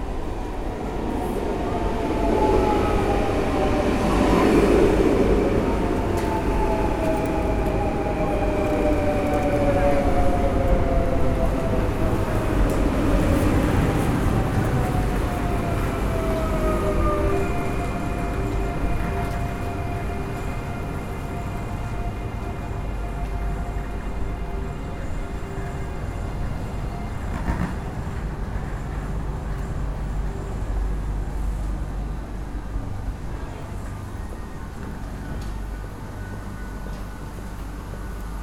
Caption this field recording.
A trip into the Rouen station, on a saturday afternoon, and taking the train to Paris.